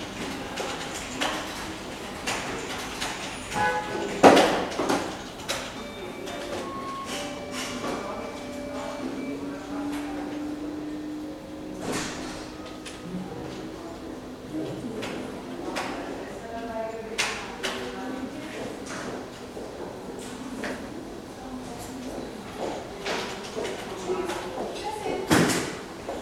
Erlangen, Deutschland - waiting for the doctor
office and X-ray sounds, slamming of doors, voices